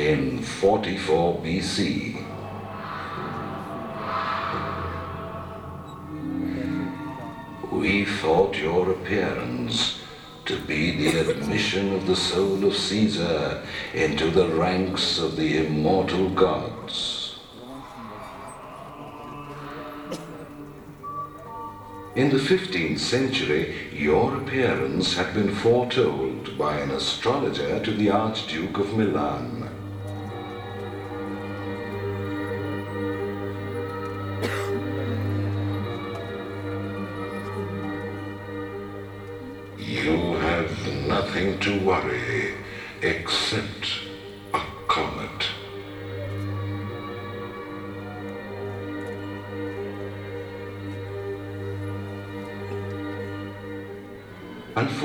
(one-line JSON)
{"title": "Priyadarshini Planetarium Rd, PMG, Thiruvananthapuram, Kerala, India - planetarium Trivandrum", "date": "2001-12-13 15:15:00", "description": "a visit to the Tiravanantapuram planetarium", "latitude": "8.51", "longitude": "76.95", "altitude": "36", "timezone": "Asia/Kolkata"}